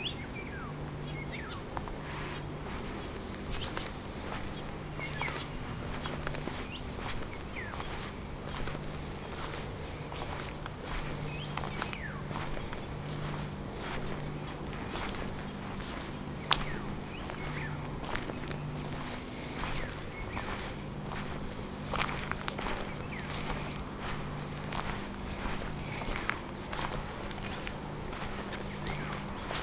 Заброшенная территория бывшего завода Автостекло: зеленая зона с руинами зданий и мусором, поросшая кустарниками и деревьями. Есть возможность переправится на другой берег реки Кривой Торец
Запись: Zoom H2n